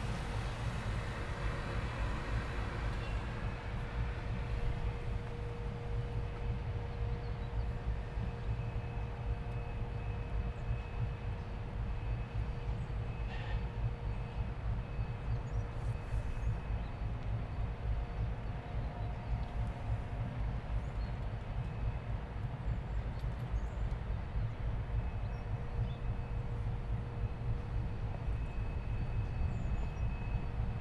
G.T. Ketjenweg, Amsterdam, Nederland - Wasted Sound Albermalen
Wasted Sound of a factory
Noord-Holland, Nederland, 6 November 2019